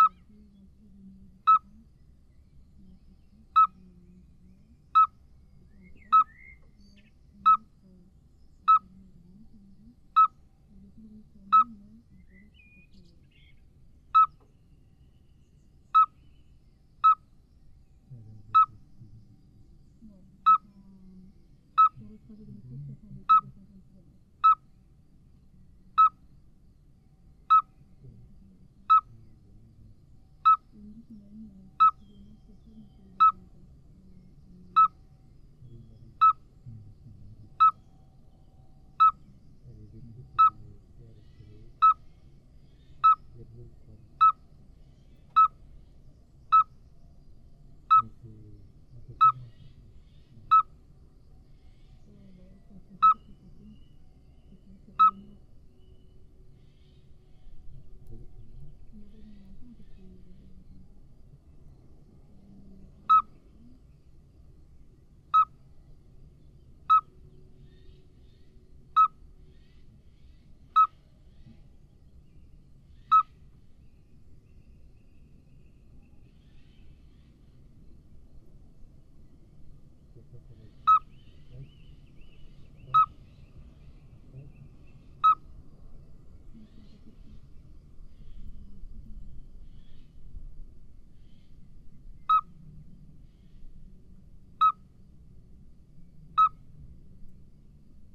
Bouhey, France - Common midwife toad
Below two rubbish bins, we heard two small Common midwife toad. It's a small frog which makes repetitive tuu tuu tuu tuu. Without experience, you could think it's a Eurasian Scops Owl. But, below a rubbish bin, this could be a problem to find that kind of bird ! The midwife toad has extremely beautiful gold silver eyes. I put the recorder near the frogs, but unfortunately people is speaking at the entrance of the cemetery.